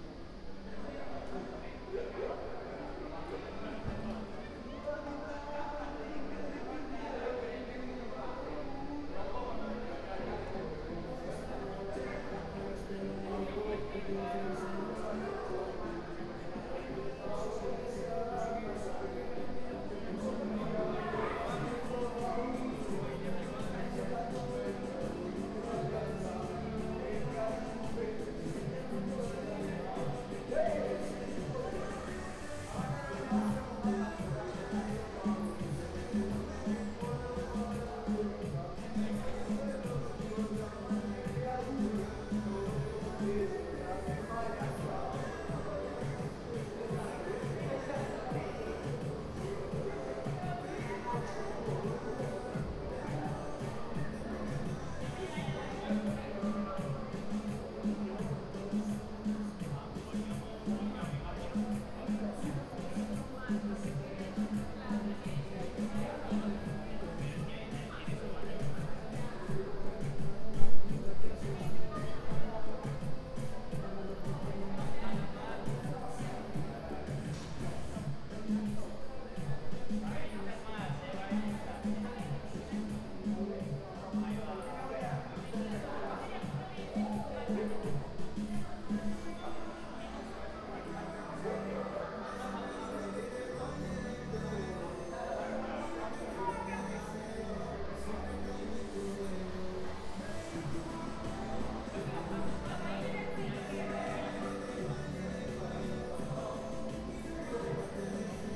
Ascolto il tuo cuore, città, I listen to your heart, city. Several chapters **SCROLL DOWN FOR ALL RECORDINGS** - Round midnight students college party again in the time of COVID19 Soundscape
"Round midnight students college party again in the time of COVID19" Soundscape
Chapter CXXXIV of Ascolto il tuo cuore, città. I listen to your heart, city
Saturday, October 3nd 2020, five months and twenty-two days after the first soundwalk (March 10th) during the night of closure by the law of all the public places due to the epidemic of COVID19.
Start at 11:49 p.m. end at 01:26 a.m. duration of recording 35’29”
Piemonte, Italia, 3 October 2020